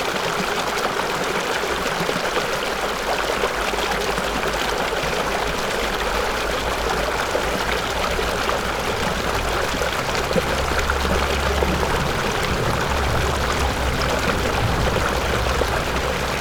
In the rocky coast, Brook
Sony PCM D50
New Taipei City, Ruifang District, 北部濱海公路, 12 July 2012